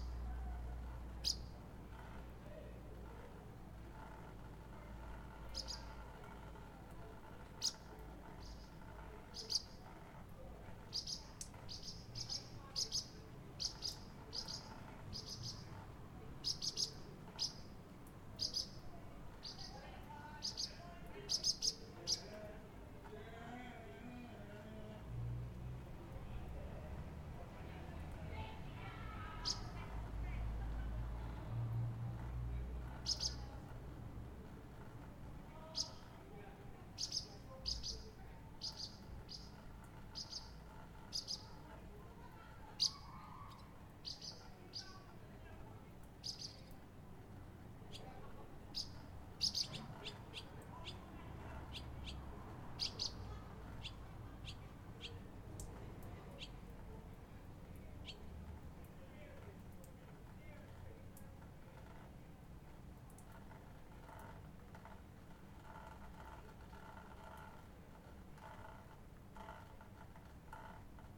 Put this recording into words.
A night time recording from the back window of my apartment after a heavy rainfall. Birds, cars, drainpipes, sounds from houses and drunken people all mixing together. Recorded onto a Zoom H5 with an Audio Technica AT2022 resting on a windowsill.